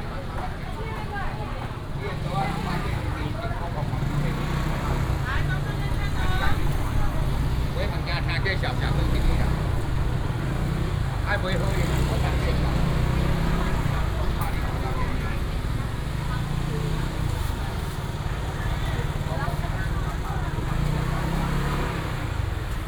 Changshou W. St., Sanchong Dist., New Taipei City - Walking in the traditional market
Walking in the traditional market